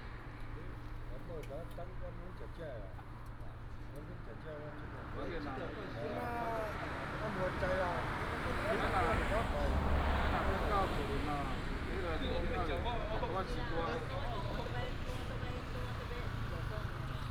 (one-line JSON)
{"title": "Zhishan Rd., Taimali Township - Night outside the store", "date": "2018-04-02 22:01:00", "description": "Night outside the store, Dog barking, traffic sound", "latitude": "22.61", "longitude": "121.01", "altitude": "15", "timezone": "Asia/Taipei"}